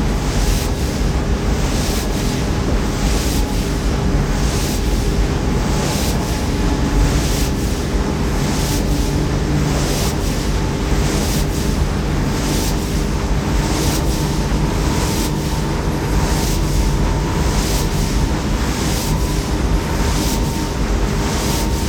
Umeå. Holmsund wind turbine
Wind Turbine #2